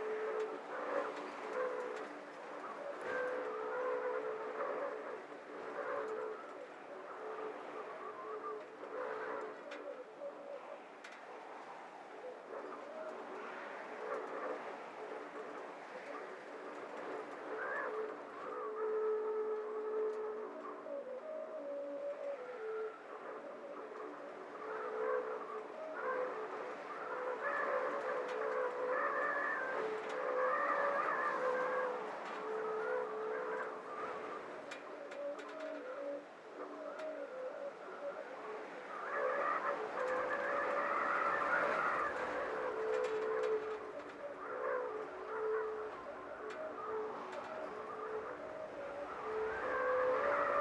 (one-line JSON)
{"title": "Unnamed Road, Nozakigō, Ojika, Kitamatsuura, Nagasaki, Japan - Whistling Fence at the Onset of a Typhoon", "date": "2019-10-23 12:30:00", "description": "Nozaki Jima is uninhabited so the fences once used to keep wild boar away from the crops are not maintained and many lie rusted and twisted from the wind and rain. This was recorded at the onset of a typhoon so the wind was especially strong.", "latitude": "33.19", "longitude": "129.13", "altitude": "36", "timezone": "Asia/Tokyo"}